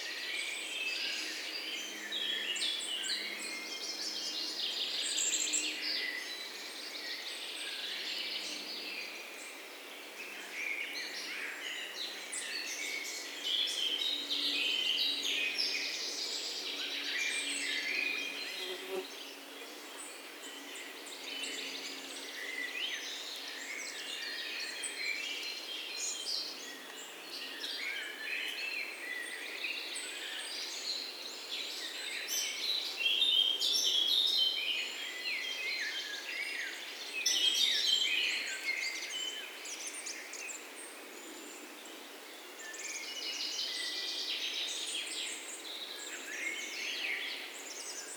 Kongens Lyngby, Danmark - Birds, Spring Symphonie
The sound of energetic and happy birds, early spring, some bypassing flyes now and then. Øivind Weingaarde.
Recorded with zoom H6 and Rode NTG 3
Region Hovedstaden, Danmark, May 2020